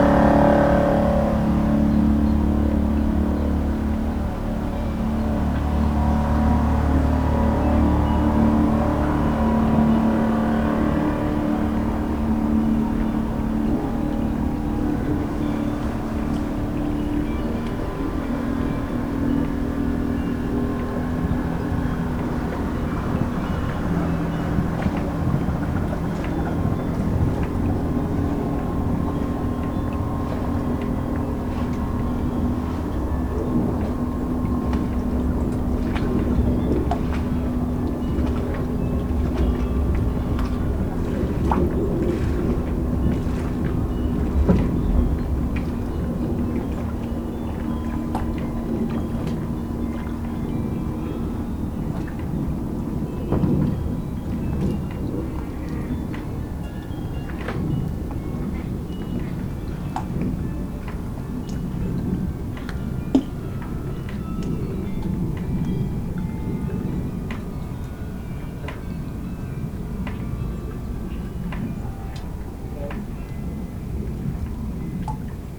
enkhuizen: marina - the city, the country & me: marina berth
carillon in the distance
the city, the country & me: july 27, 2012